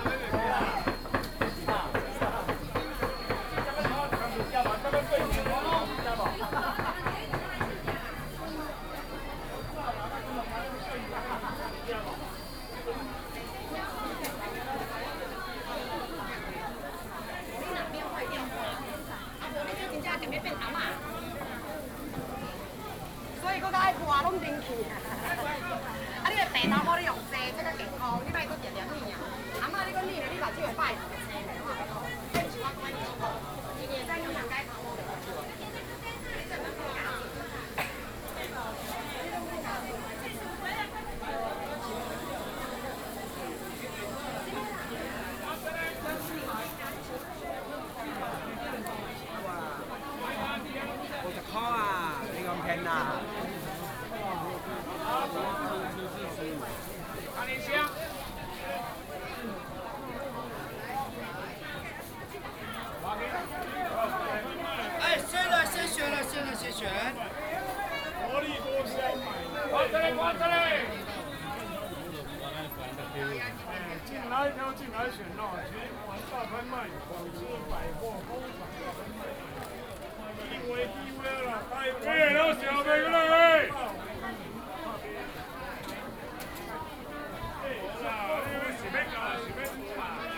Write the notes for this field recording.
Walking in the traditional market, Walking in the alley